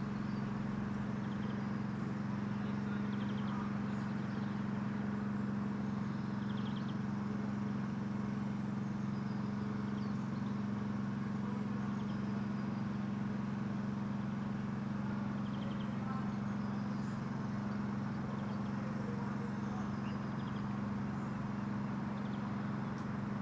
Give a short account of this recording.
The Drive Moor Crescent Moorfield Little Moor Jesmond Dene Road Osborne Road Mitchell Avenue North Jesmond Avenue Newbrough Crescent Osborne Road St Georges Close, Tidying up the courts, a steady drone from over the broken-down fence, A remembrance garden crucifix gazes down